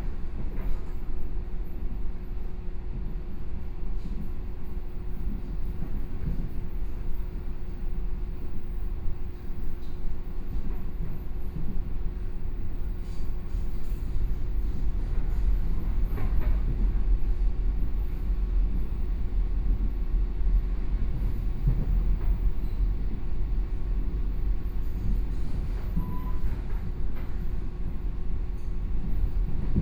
Su'ao Township, Yilan County - Local Train
from Su'aoxin Station to Dongshan Station, Binaural recordings, Zoom H4n+ Soundman OKM II